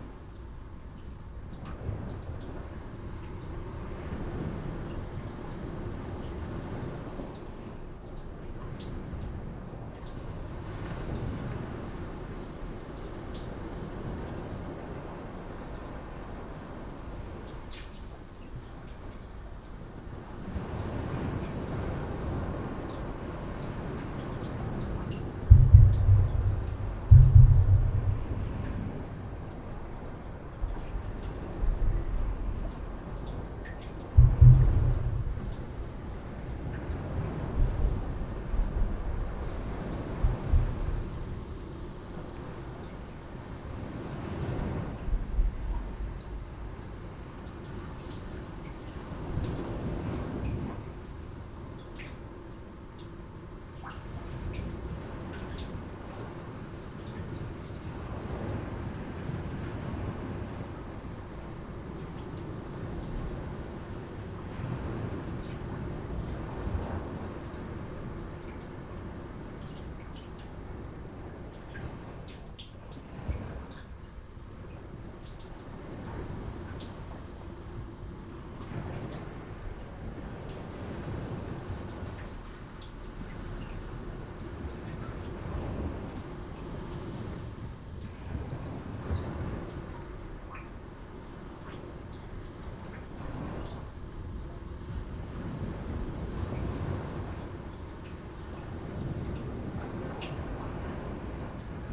Stalos, Crete, in a concrete tube
some tube coming to the beach...you can hear the main street of the town through it
Stalos, Greece